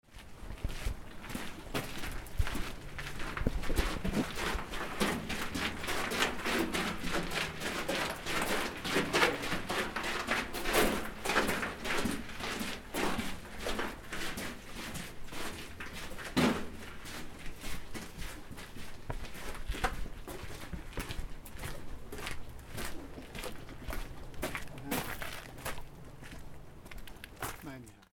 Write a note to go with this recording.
Tunneldurchbruch am Lago die Poschiavo, Gehen durch den Tunnel